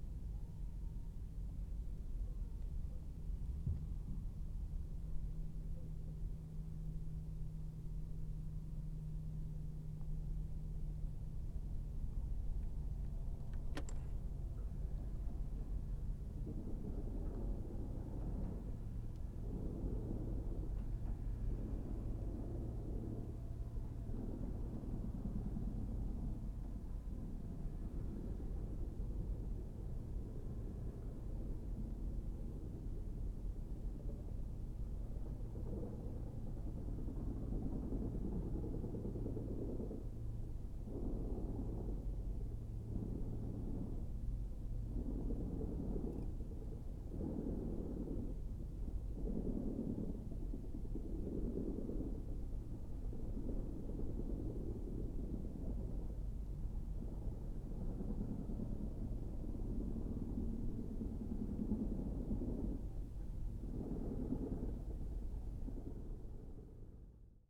throwing ice and hearing manufacturing sounds across the river